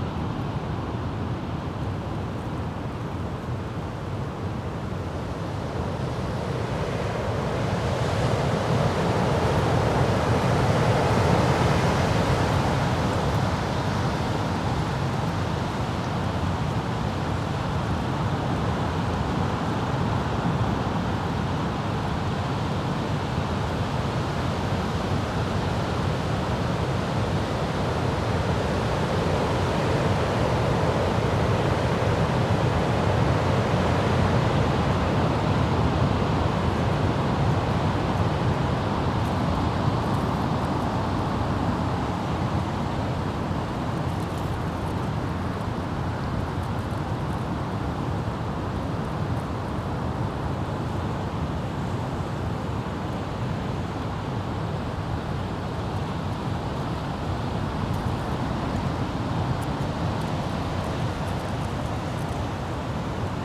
Recording of a strong wind in the middle of the forest.
Recorded with DPA 4560 on Sound Devices MixPre-6 II.
powiat karkonoski, województwo dolnośląskie, Polska, 16 February 2022